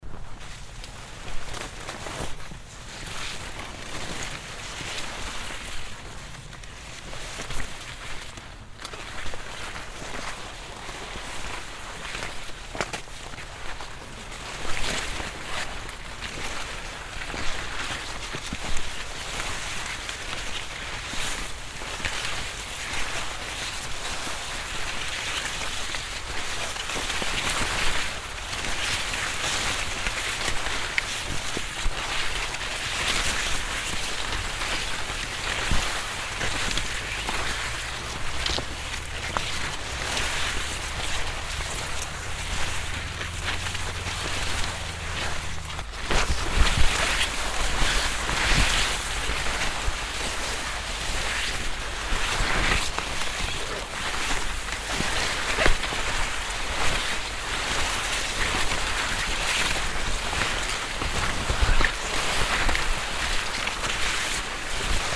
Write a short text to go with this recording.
A slow walk through a sweet corn field. The sound of the leaves passing by. Alscheid, Maisfeld, Ein langsamer Gang durch ein Maisfeld. Das Geräusch der Blätter. Alscheid, champ de maïs, Une lente promenade à travers un champ de maïs. Le son des feuilles quand on passe. Project - Klangraum Our - topographic field recordings, sound objects and social ambiences